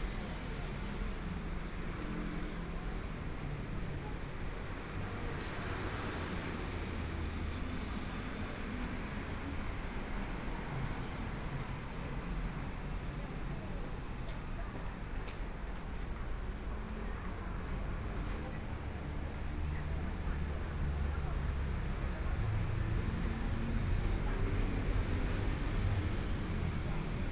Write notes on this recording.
walking on the Road, from Shuangcheng St. to Xinsheng N. Rd., Traffic Sound, Binaural recordings, ( Proposal to turn up the volume ), Zoom H4n+ Soundman OKM II